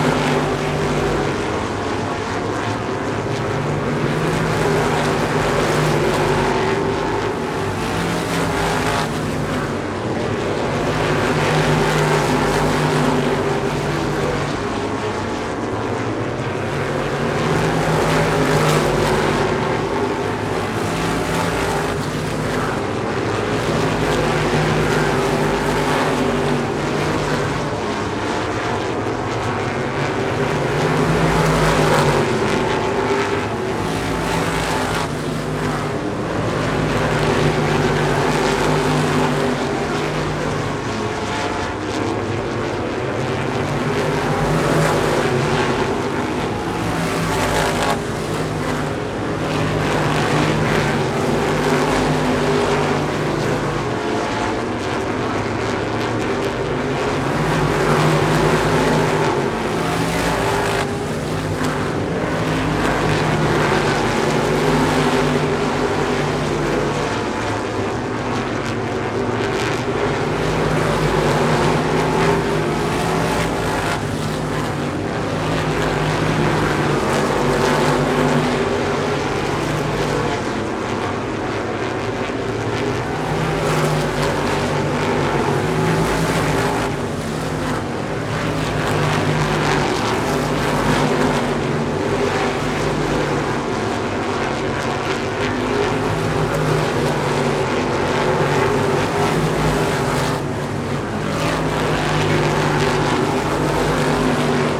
Hudson Speedway - Supermodified Feature Race
The feature race for the 18 SMAC 350 Supermodifieds. Since they have to be push started it takes awhile for the race to actually start.